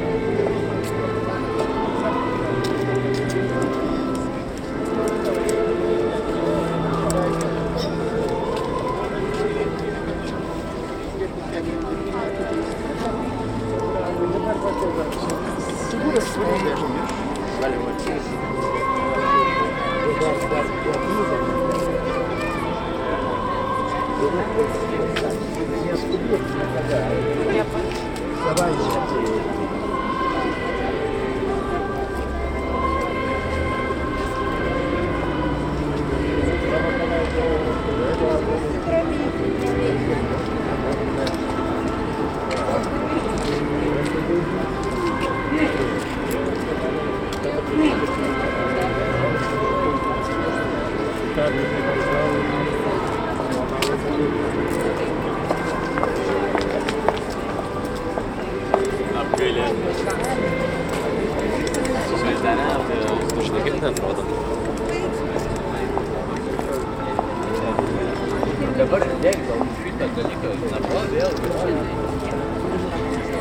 Easter Procession at Cathedral Sq, Vilnius, bells, orchestra, crowd talks
easter, procession, church bells, capital, liturgy, priest, orchestra, crowd, Vilnius
Vilnius, Lithuania, 24 April 2011